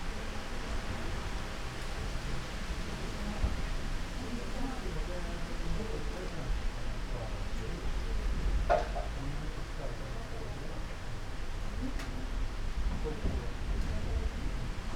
curves of ancient trees
writing stories upon the sky
writing their silences, with bouncing wooden-floor noise
gardens sonority